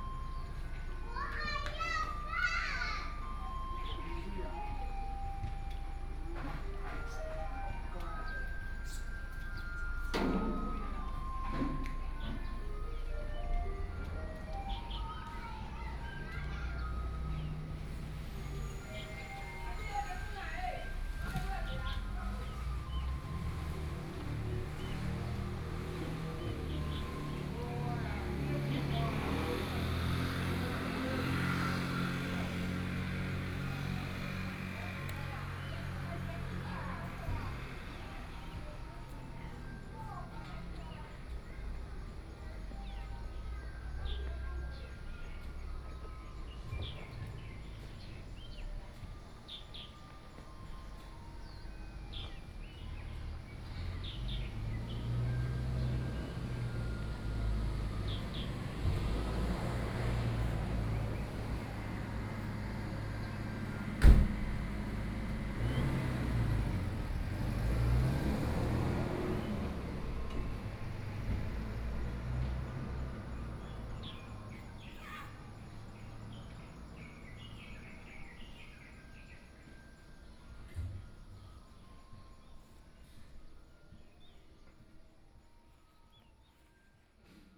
Dacheng Rd., 蘇澳鎮存仁里 - Small village
In the square, in front of the temple, Hot weather, Traffic Sound, Birdsong sound, Small village, Garbage Truck
Yilan County, Taiwan, 28 July 2014